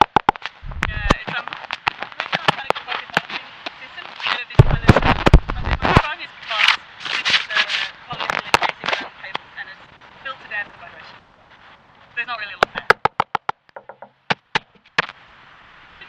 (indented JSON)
{
  "title": "Safti Link built to dampen vibration",
  "latitude": "1.34",
  "longitude": "103.68",
  "altitude": "31",
  "timezone": "GMT+1"
}